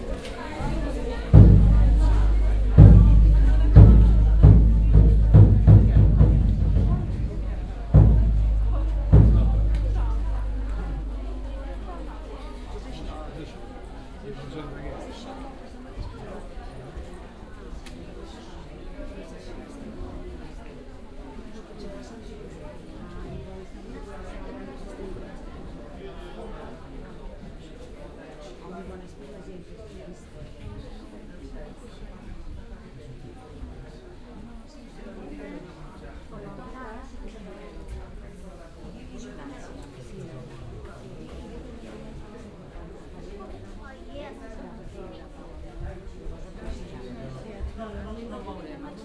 nikkō, tōshō-gu shrine, inside temple building
inside one of the temple buildings, steps of the barefeet visitors
international city scapes and topographic field recordings